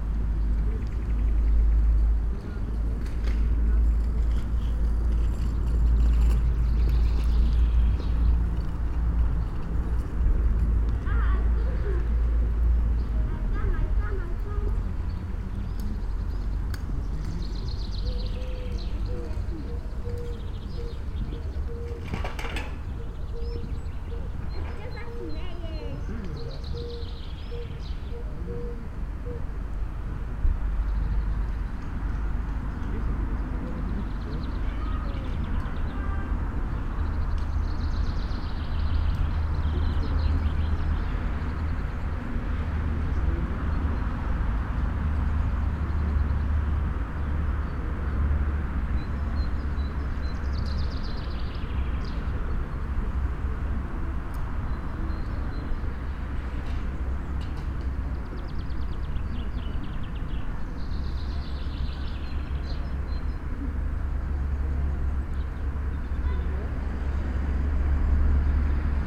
Bôrický park, Žilina, Slovensko
Just another corona saturday afternoon in city park.
28 March 2020, ~13:00